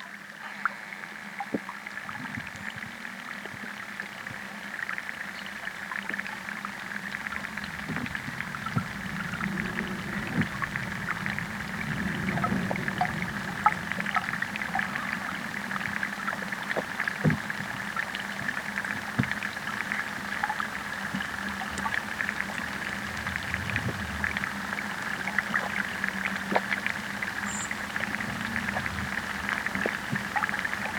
Old Kilpatrick, Glasgow - The Forth & Clyde Canal 001
3 channel mix with a stereo pair of DPA-4060s and an Aquarian Audio H2A hydrophone. Recorded on a Sound Devices MixPre-3